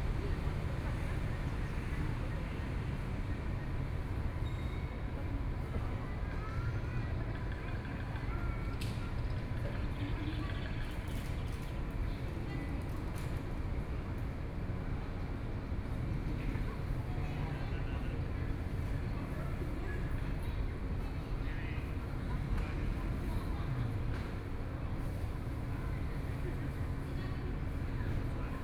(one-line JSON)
{"title": "ShuangCheng Park, Taipei City - in the Park", "date": "2014-04-27 10:53:00", "description": "in the Park, Environmental Noise, A group of foreign workers in the park to celebrate the birthday\nSony PCM D50+ Soundman OKM II", "latitude": "25.07", "longitude": "121.52", "altitude": "11", "timezone": "Asia/Taipei"}